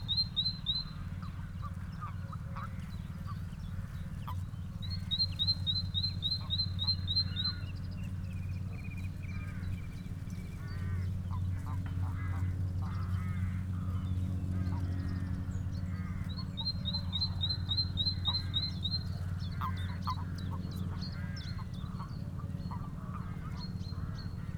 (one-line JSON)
{"title": "Wilcot, Wiltshire, UK - Birds and the Bees", "date": "2011-03-25 15:09:00", "description": "Recorded on the banks of the Kennet and Avon canal near Wilcot, as part of me walking from my house on the Kennet in Reading to Bristol over the course of a few months in 2011. There were loads of common frogs spawning at the edge of the canal, accompanied by a dozen different birds including, Woodpigeon, Chiff-chaff, Great tit, Blue Tit, Greenfinch, Collard Dove, Wren, Redshank?, Moorhen, Willow warbler and Robin. Recorded on an Edirol with custom capsule array.", "latitude": "51.34", "longitude": "-1.82", "altitude": "128", "timezone": "Europe/London"}